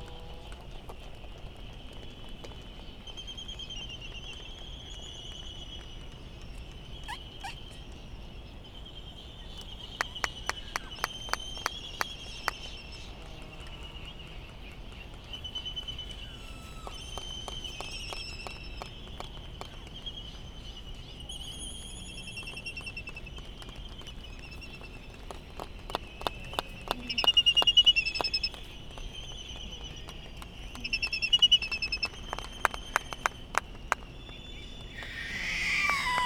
Laysan albatross soundscape ... Sand Island ... Midway Atoll ... laysan calls and bill clapperings ... white tern calls ... open lavalier mics ... warm ... slightly blustery morning ...